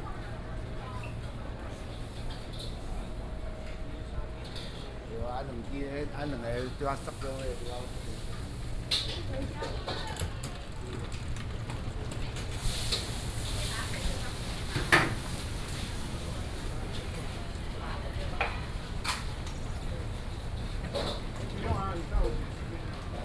Aly., Lane, Tonghua St. - Walking in a small alley
Walking in a small alley, Traffic noise, Various shops